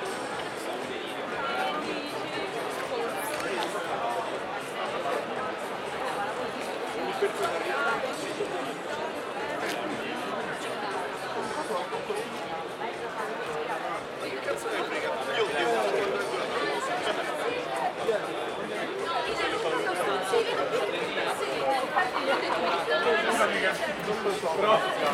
L'Aquila, Piazza Chiarino - 2017-06-08 05-Pzza Chiarino
L'Aquila AQ, Italy